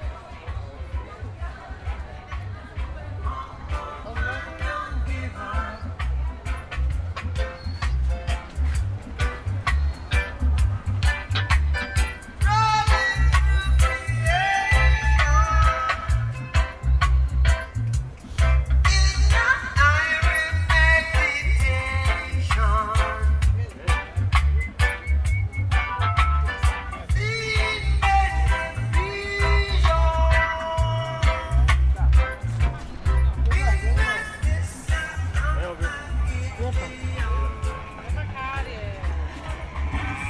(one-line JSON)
{"title": "Feira, Cachoeira - BA, Brasil - Feira, barracas de cds e dvds", "date": "2016-05-27 12:15:00", "description": "Na feira, cada barraca de cds escuta sua música, e testa seus dvds.\nIn the free market, each sailesman testing a different cd.", "latitude": "-12.60", "longitude": "-38.96", "altitude": "8", "timezone": "America/Bahia"}